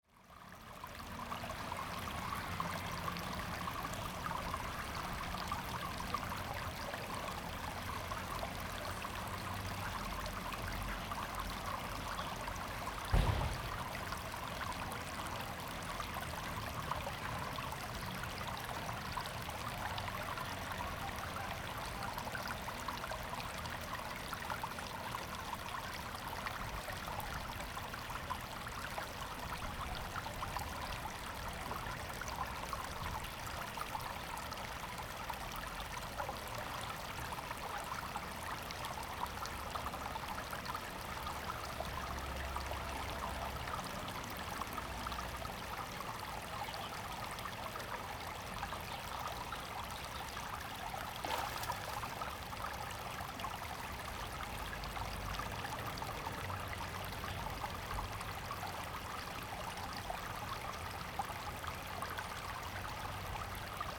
龍泉溪, Changhua City - Small streams
Small streams, Traffic sound
Zoom H2n MS+XY
15 February 2017, 13:57